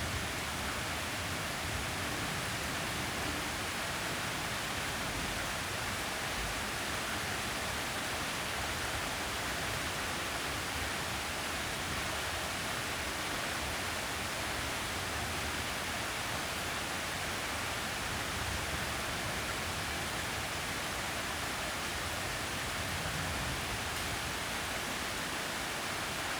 Hiddenseer Str., Berlin, Germany - Summer Thunder Storm, 55min - with baby, drums, magpie
Warm, unsettled weather. This is almost one continuous recording but a prologue and epilogue have been added to give a rounder picture of the storm's effect on Hinterhof life. The prologue - 0'00"/1'57" with baby and thunder - occurred about 10min before the rain started and the epilogue - 53'02"/55'23" with magpie and water drips - took place about 50min after it had finished. In between it's one take. The loudest thunder clap at 42'04" - much closer than all the rest - is heavily overloads the original recording. For this upload I've reduced its level. The distortion is still there but less obvious and doing this means that the rest of the recording can be brought up to a more consistent level.